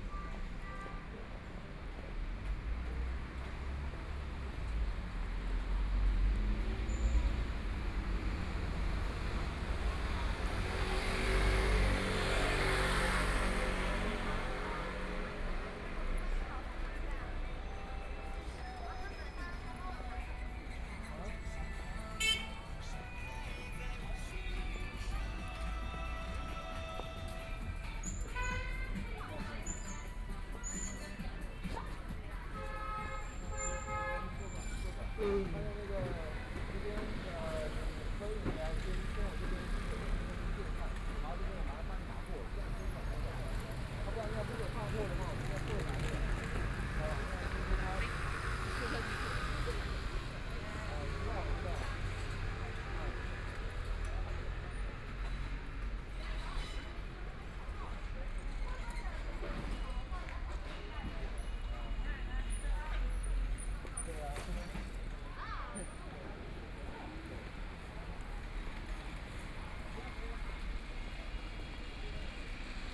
彰武路, Yangpu District - in the Street
University nearby streets, And from the sound of the crowd, Traffic Sound, Binaural recording, Zoom H6+ Soundman OKM II
Yangpu, Shanghai, China